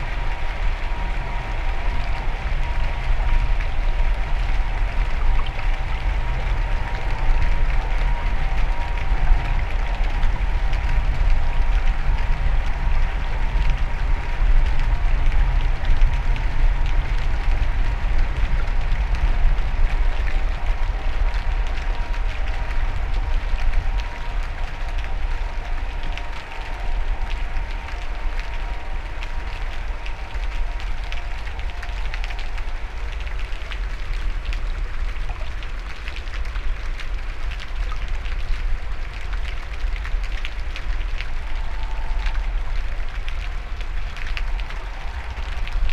Valparaíso, Chile - Containers, Valparaiso Coast
Valparaíso Region, Chile